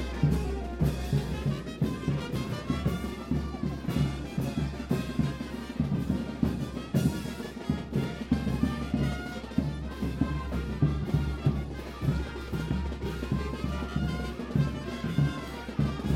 {
  "title": "Local Bands Yucay- Cusco Perú",
  "date": "2007-12-22 11:15:00",
  "description": "Bandas folkloricas en Yucay Cusco - Sacred Valley of Incas. By Acm",
  "latitude": "-13.31",
  "longitude": "-72.02",
  "altitude": "2909",
  "timezone": "America/Lima"
}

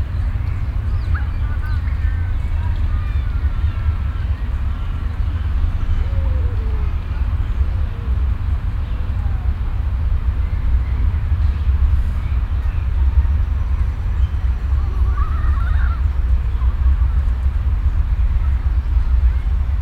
{
  "title": "cologne, stadtgarten, unter Platane - koeln, stadtgarten, unter platane, nachmittags",
  "description": "unter grossem baum stehend - baumart: platane hispanicus - stereofeldaufnahmen im juni 08 - nachmittags\nproject: klang raum garten/ sound in public spaces - in & outdoor nearfield recordings",
  "latitude": "50.94",
  "longitude": "6.94",
  "altitude": "52",
  "timezone": "GMT+1"
}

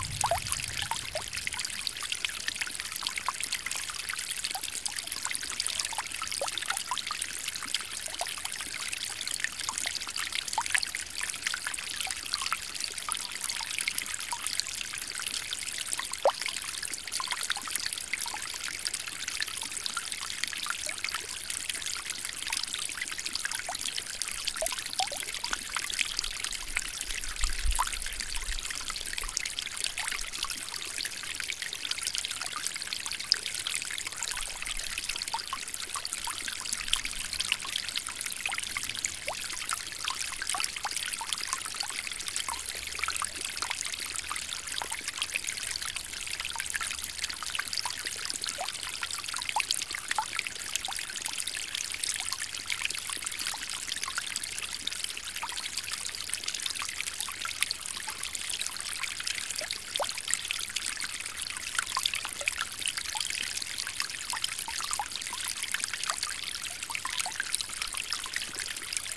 {
  "title": "Marknesse, Nederland - Soundscape of a hydrological laboratory.",
  "date": "2021-10-18 08:33:00",
  "description": "Recording made at the hydrological laboratory\nBackground:\nWater is very important for living organisms, but it can also pose a threat, such as the rise in sea level due to global warming.\nFor centuries now, there has been a special relationship between the Dutch and the water. The polders that have been reclaimed from the sea are world famous, but the storm surge barriers are at least as extraordinary. The Delta Works and Afsluitdijk, for instance, which the Dutch built to protect them from the water. They built Holland as we know it today with great knowledge and perseverance. As a result, Holland is internationally renowned as the world’s laboratory in terms of water management.\nTrial garden\nTesting was indispensable to obtain the required knowledge. The Waterloopkundig Laboratorium, a hydrological laboratory, was established in Noordoostpolder after WWII, in the pre-computer age.",
  "latitude": "52.67",
  "longitude": "5.91",
  "altitude": "2",
  "timezone": "Europe/Amsterdam"
}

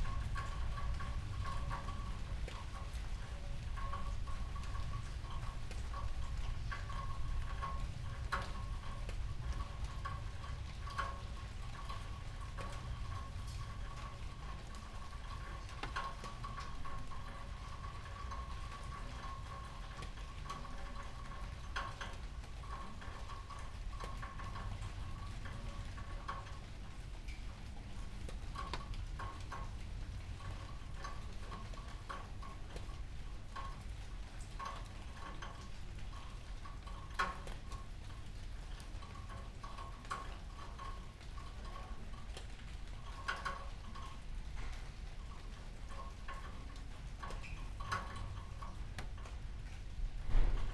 {
  "title": "Berlin Bürknerstr., backyard window - distant thunder, light rain",
  "date": "2016-08-28 23:10:00",
  "description": "distant thunder and light rain on a warm late summer night\n(SD702, MKH802)",
  "latitude": "52.49",
  "longitude": "13.42",
  "altitude": "45",
  "timezone": "Europe/Berlin"
}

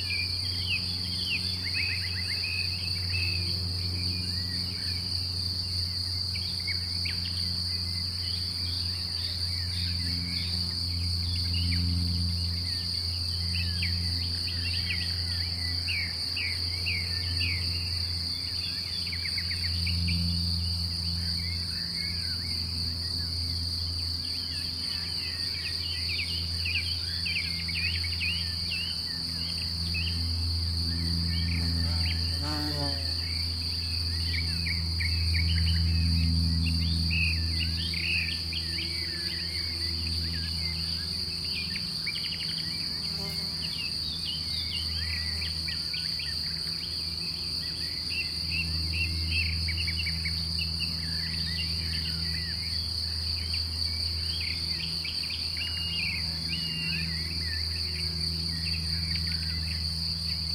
{
  "title": "Chindrieux, France - Prairie en Chautagne",
  "date": "2010-05-10 17:15:00",
  "description": "Parterre de grillons et orchestre d'oiseaux au printemps en Chautagne.",
  "latitude": "45.81",
  "longitude": "5.84",
  "altitude": "235",
  "timezone": "Europe/Paris"
}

